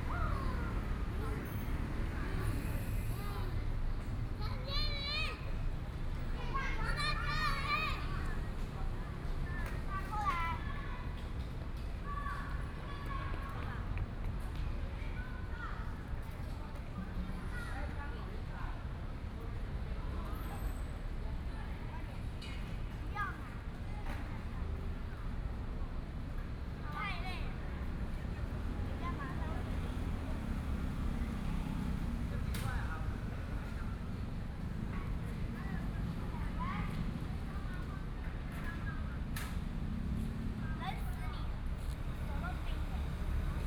Children, Traffic Sound
Please turn up the volume a little
Binaural recordings, Sony PCM D100 + Soundman OKM II
Taipei City, Taiwan, 28 February 2014, ~19:00